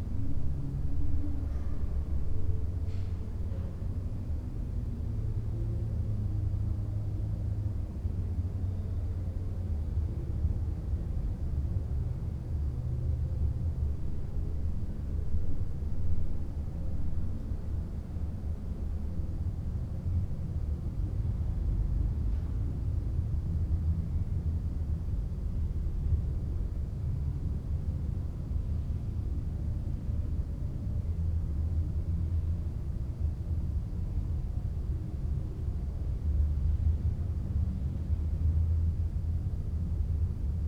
inner yard window, Piazza Cornelia Romana, Trieste, Italy - saturday night
door squeak somewhere deep inside of the house
7 September 2013, 22:34